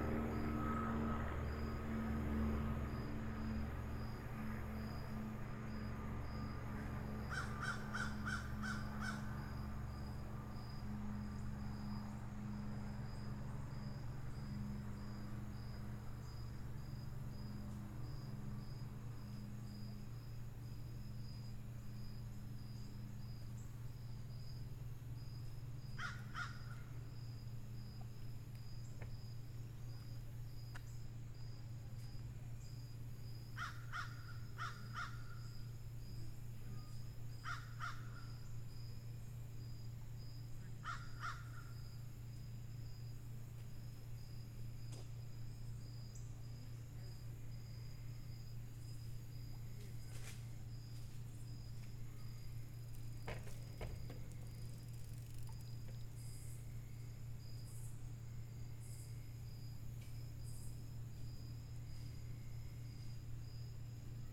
Crystal Bridges Museum of American Art, Bentonville, Arkansas, USA - West Walkway
Sunset along the West Walkway at Crystal Bridges Museum of American Art